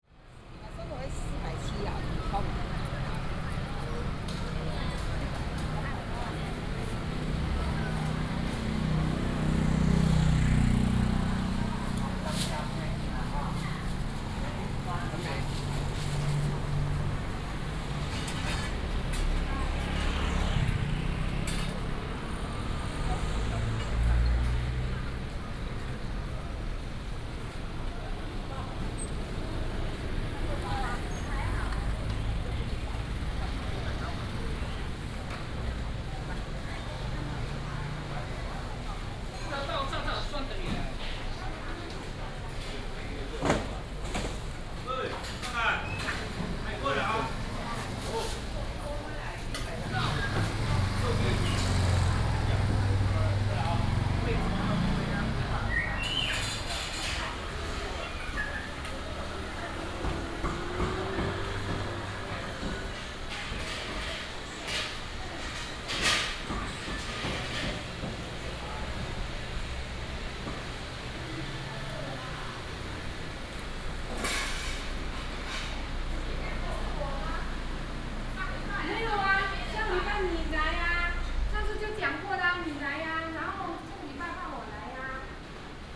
關西公有零售市場, Guanxi Township - Walking through the market
Walking through the market, Traffic sound, Traditional market
Hsinchu County, Taiwan